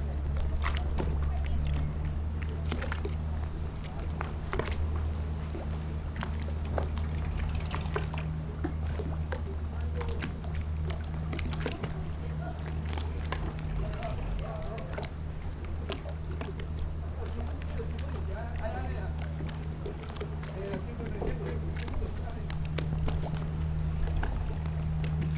北寮村, Huxi Township - Waves and Tide
At the beach, sound of the Waves
Zoom H2n MS+XY
Penghu County, Huxi Township